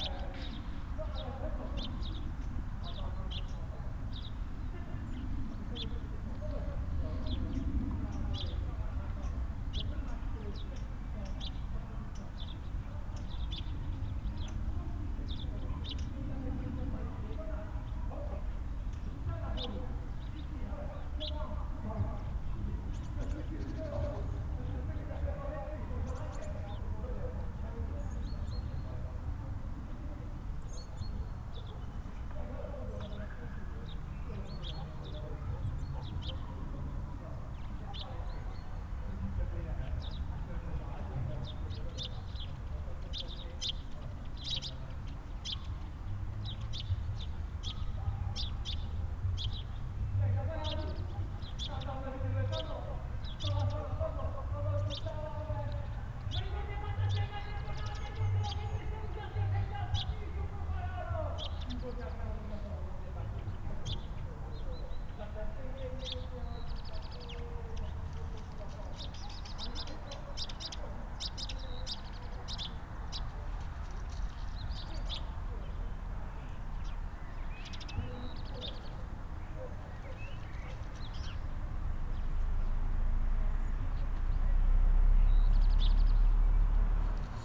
Mariánské Radčice, Tschechische Republik - Village fair from outside the village
Village fair from outside the village.